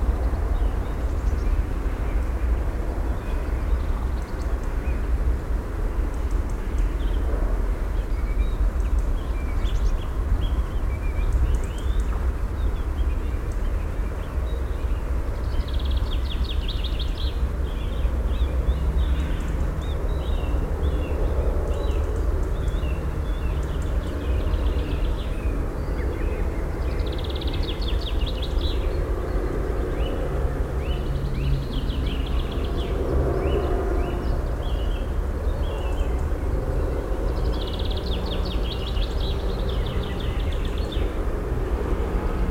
monheim, schleider weg, weg am feld
am frühen abend, blick auf felder, im hintergrund verkehrsgeräusche der nahen autobahn
soundmap nrw:
social ambiences, topographic field recordings